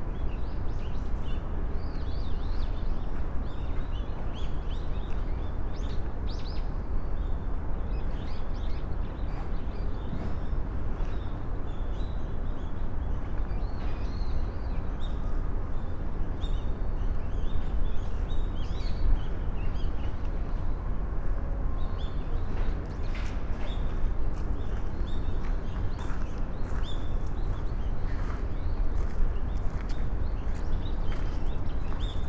koishikawa korakuen gardens, tokyo - pond reflecting skyscrapers and white bird
garden sonority poema